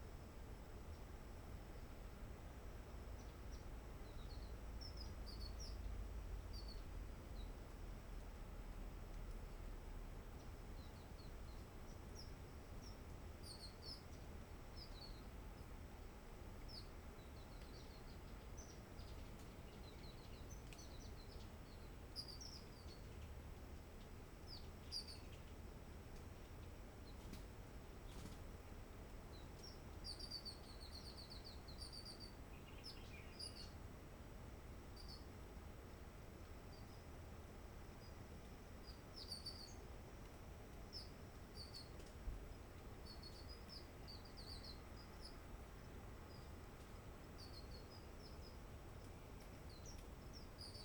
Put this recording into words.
Forêt de la Roche Merveilleuse: chant d'oiseaux du genre "zostérops" olivatus et borbonicus (oiseaux-lunette et oiseaux Q blanc), Ce lieu est durement impacté par le tourisme par hélicoptère.